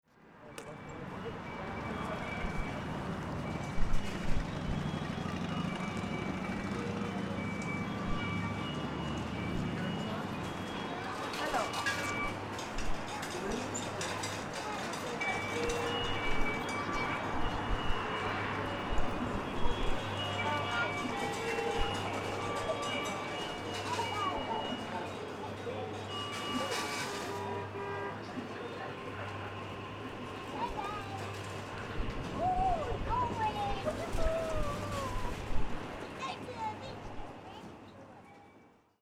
Weymouth Beach 2010 recorded by David Rogers for PVA / World listening day